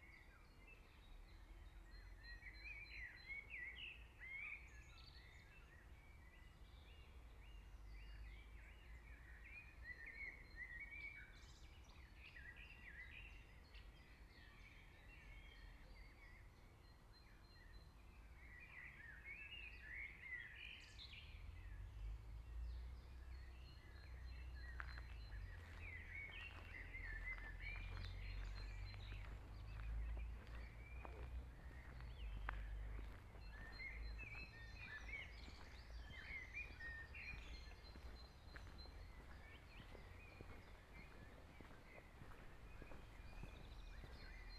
Kopperpahler Allee, Kronshagen, Deutschland - Binaural evening walk
Evening walk, mostly quiet neighborhood, some traffic noise on the street, a train passing by, lots of birds, some other pedestrians and bicycles, unavoidable steps and breathing. Sony PCM-A10 recorder, Soundman OKM II Klassik mics with furry earmuffs as wind protection.
Schleswig-Holstein, Deutschland